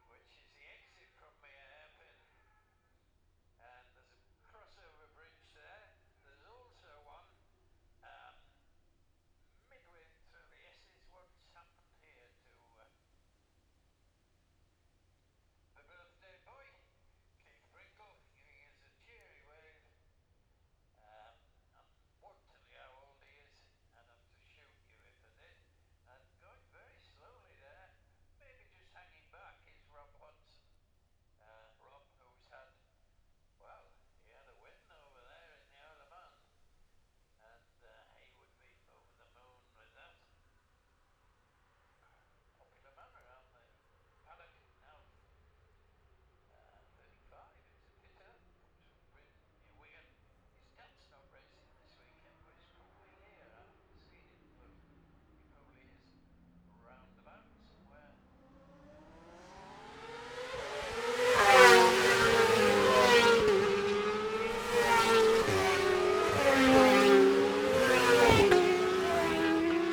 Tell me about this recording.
the steve henshaw gold cup 2022 ... 600 group two practice ... dpa 4060s on t-bar on tripod to zoom f6 ...